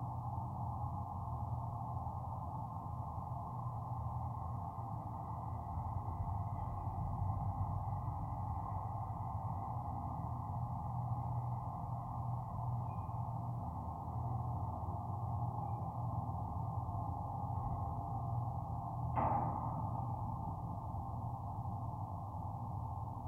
Klondike Park Lake Bridge, Augusta, Missouri, USA - Klondike Park Lake Bridge
Recording from contact mics attached to the chain link fence on the bridge over Klondike Park Lake. The center of the bridge floats on the lake and its ends are suspended from the shore. The drone from the Labadie Energy Center power plant, 1.3 miles away from the park, is a constant presence. Sound of a plane starts at 1:20.
Missouri, United States, 11 December 2020